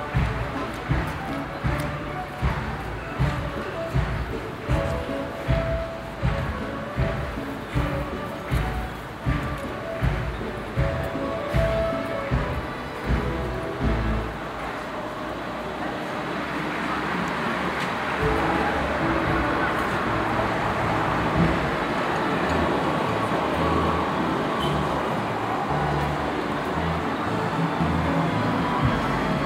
cologne, neumarkt, circus roncalli
soundmap: cologne/ nrw
neumarkt atmo während einer abendlichen vorstellung des circus roncalli
project: social ambiences/ listen to the people - in & outdoor nearfield recordings